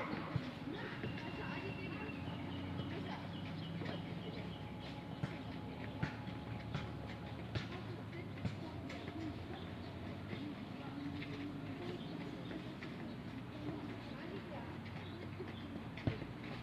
Title: 202006151758 Tenmangu Public Park
Date: 202006151758
Recorder: Sound Devices MixPre-6 mk1
Microphone: Luhd PM-01Binaural
Location: Sakamoto, Otsu, Shiga, Japan
GPS: 35.080736, 135.872991
Content: binaural soccer japan japanese boys sports children practice park cars